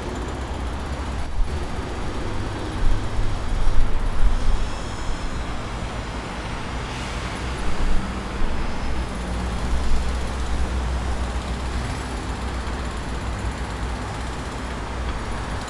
Building Site, Lesi Ukrainky Blvd, Kiev, Ukraine
While in Kiev, we stayed in a 4th floor apartment directly across the street from this building site. It's quite well along now and resembles the hotel building just along the street.
Schoeps CCM4Lg & CCM8Lg M/S in modified Rode blimp directly into a Sound Devices 702 recorder.
Edited in Wave Editor on Mac OSx 10.5
11 September 2009